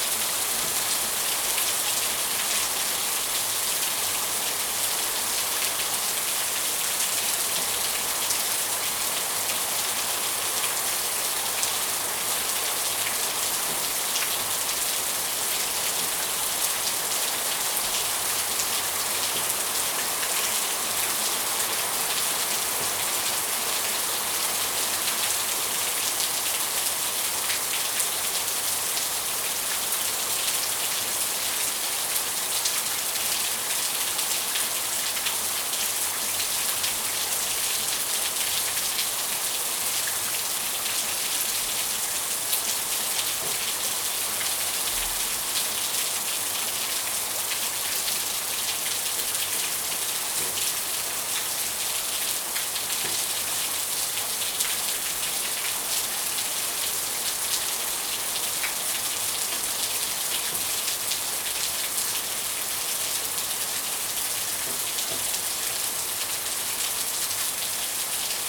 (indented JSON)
{
  "title": "Broads Rd, Lusaka, Zambia - Lusaka heavy rains....",
  "date": "2018-12-09 13:32:00",
  "description": "soundscapes of the rainy season...",
  "latitude": "-15.41",
  "longitude": "28.29",
  "altitude": "1279",
  "timezone": "Africa/Lusaka"
}